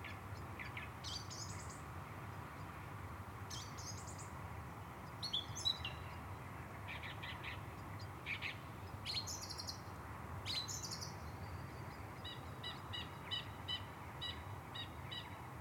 No. 1 Henley Cottage, Acton Scott, Shropshire, UK - Birds, Wind and Chores, recorded from the casement window
This is a recording made from the casement windows of an old Victorian cottage in which I was staying in order to record the sounds of the domestic interior of a period property. It was quite cold and dark and I was ill when I was there. But when I was organising my things for the final night of my stay, I noticed the amazing bird sounds from the window of the bedroom on the very top floor. It was an incredible sound - the starlings passing, the wind howling, even the blurry and annoying sounds of the traffic and planes on nearby roads... I wanted to record it. There is a lot of wind in the recording and I could have had the levels a little higher to get a better noise/signal ratio, but the starlings passing over at around 5-6 minutes in are amazing. You can hear me going out to the coal bunker as well, and filling the enamel water jug. Recorded with FOSTEX FR-2LE using Naiant X-X omni-directionals.